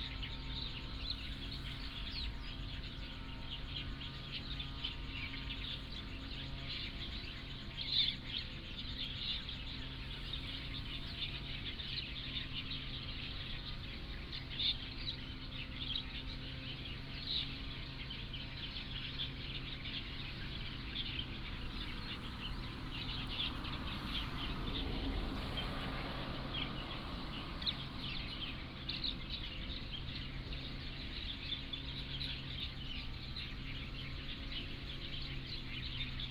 National Museum of Prehistory, Taitung City - Birdsong
Birdsong Traffic Sound, Lawn mower
Taitung County, Taitung City, 博物館路10號, 9 September 2014, 09:49